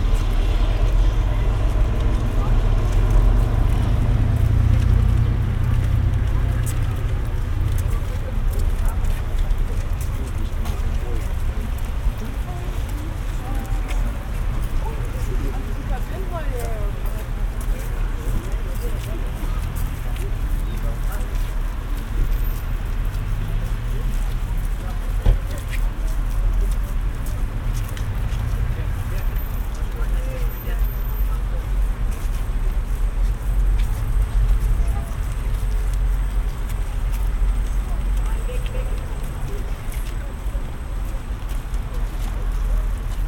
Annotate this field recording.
Entrance to mall. Recorded with Sennheiser ambeo headset.